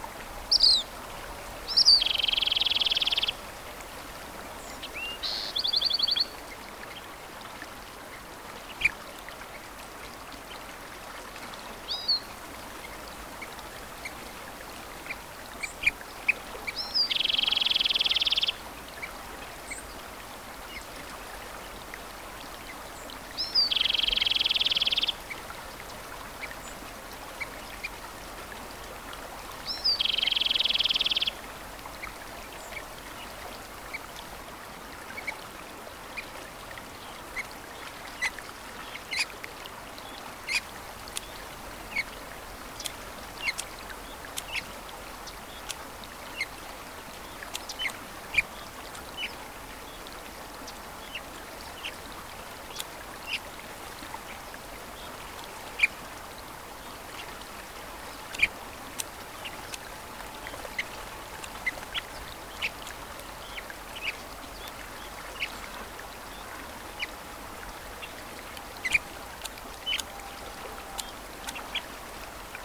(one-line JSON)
{"title": "Lone Pine, CA, USA - Birds at Sunrise on Owen's River", "date": "2022-08-26 06:10:00", "description": "Metabolic Studio Sonic Division Archives:\nDawn chorus of birds on Owen's River during sunrise. One mic placed near a tree and another mic placed near the surface of the river", "latitude": "36.62", "longitude": "-118.04", "altitude": "1106", "timezone": "America/Los_Angeles"}